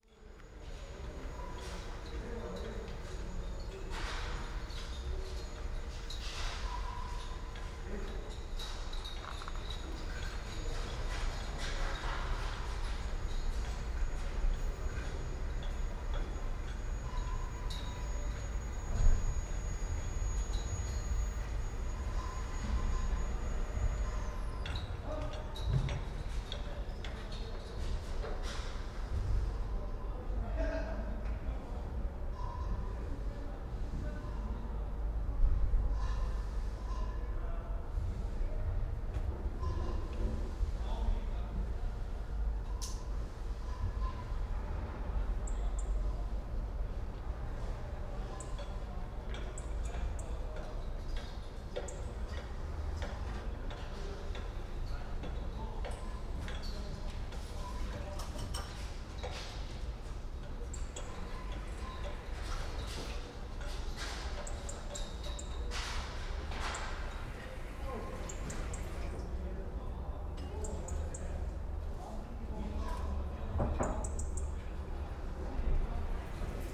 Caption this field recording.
preparing food and repairing roof